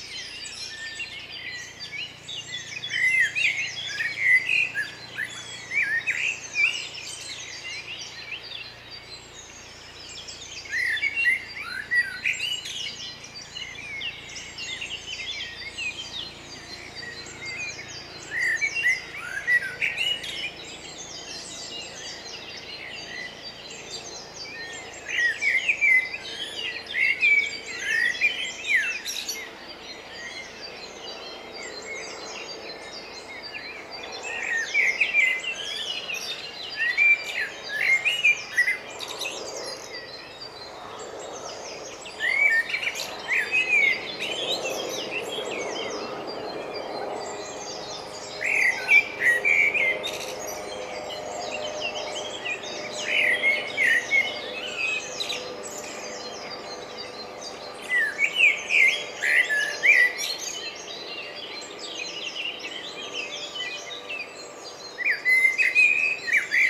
Badegasse, Bad Berka, Deutschland - Beneath the Park Floor
*Stereophony AB (length 365mm)
Varied bird vocalizations, drones of cars and aircraft.
Recording and monitoring gear: Zoom F4 Field Recorder, RODE M5 MP, AKG K 240 MkII / DT 1990 PRO.
Landkreis Weimarer Land, Thüringen, Deutschland, 9 May